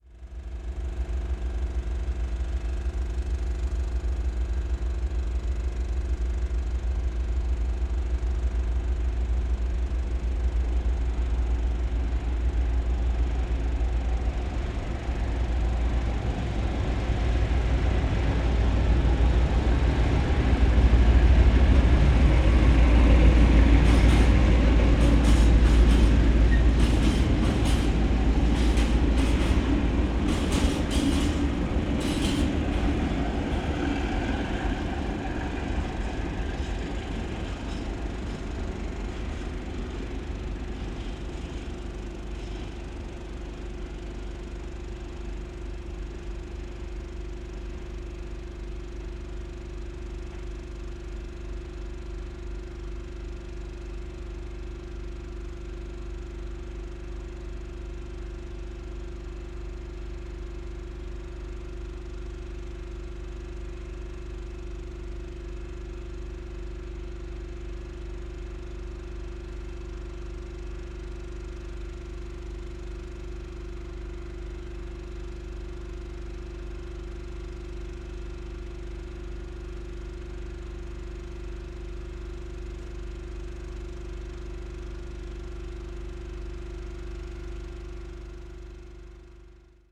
Maribor, Studenci station - train engines
train engine ideling, freight train is passing by
Maribor, Slovenia, 30 May